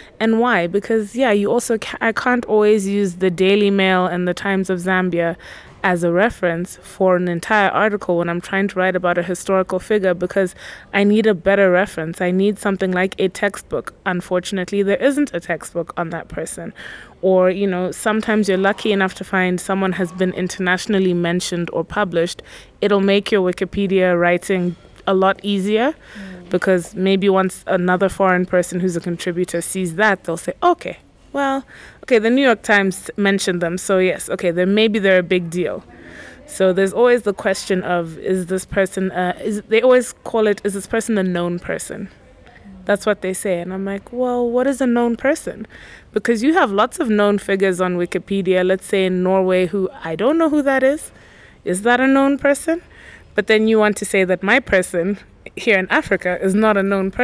Latitude, Leopards Ln, Lusaka, Zambia - Leelee Ngwenya contributing to Wiki Women Zambia
we are in the outskirts of Lusaka, in one of these surprising villas with leafy surrounding garden… this place called “Latitude” serves a gallery, events place, hotel… here, we caught up with another woman writer who contributed to the WikiWomenZambia project, Puthumile Ngwenya aka Leelee. In our conversation, Leelee shares details about her motivation to participate in the project and what the experience has meant for her as a woman media professional in the country…
the entire interview is archived here:
11 December, 11:15